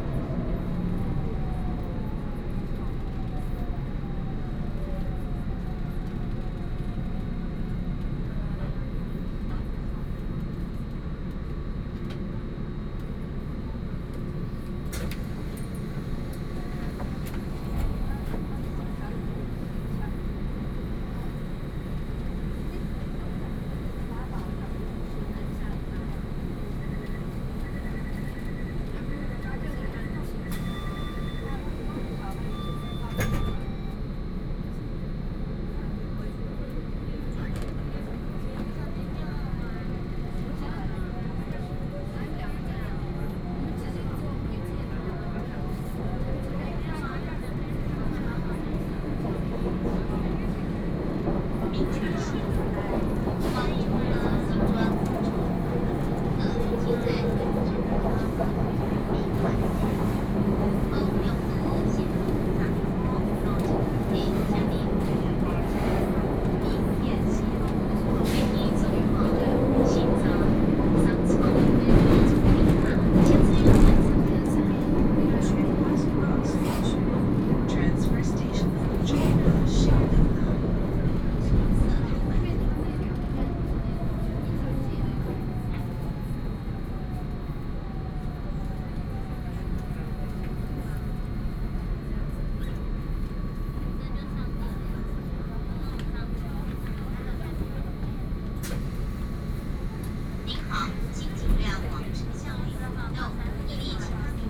Taipei, Taiwan - Take the MRT
Inside the MRT train, Sony PCM D50 + Soundman OKM II